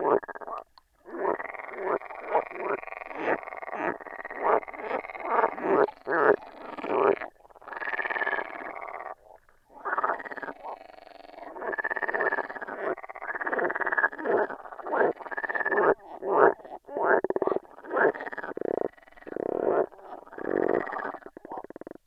Utena, Lithuania, frogs chorus on hydrophone
very special season of the year. green beasts are everywhere, so let's listen to their chorus. hydrophone recording.